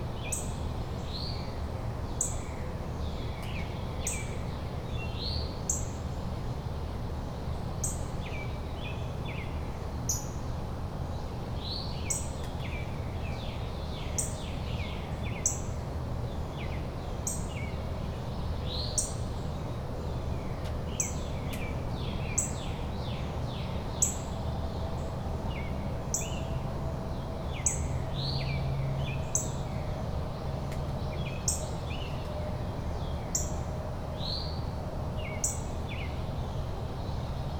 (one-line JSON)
{"title": "Suffex Green Lane, GA - Morning Birds", "date": "2020-06-15 05:09:00", "description": "The birds in this area are particularly active from around 4:30 onward. I'm not normally up at this time, but I had a very late night so I decided to go out and record them. I don't think I ever realized just how loud the birds were in the early morning hours before I took this recording.\nThe recording was made with a Tascam DR-100 Mkiii and a custom wind reduction system.", "latitude": "33.85", "longitude": "-84.48", "altitude": "296", "timezone": "America/New_York"}